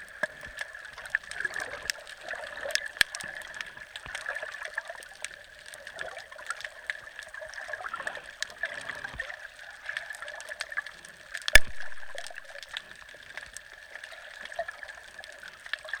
Bundeena, NSW, Australia - (Spring) Inside Bundeena Bay At Night
A similar yet quite different soundscape to the one I had recorded earlier in the day. This one was recorded at high tide with very few people around, the one had midday was recorded at low tide with Bundeena very busy, especially since it is the school holidays at the moment.